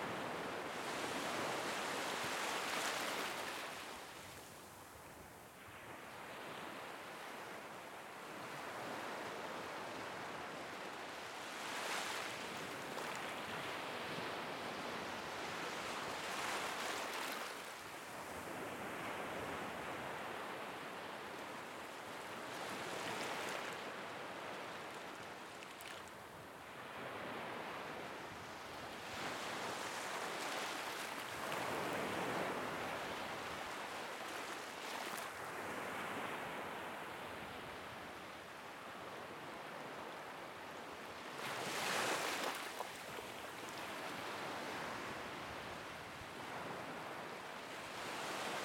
Pass. du Tertre Mignon, Dinard, France - vagues proches et mer calme
ambiance de mer avec les vagues légères prises très proches sur la plage calme.
31 July 2021, 21:32